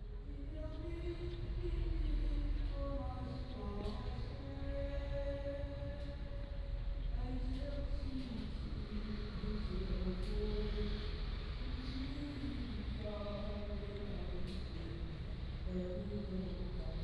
Outside the visitor center, Distant ship's whistle
屏東縣 (Pingtung County), 臺灣省 (Taiwan), 中華民國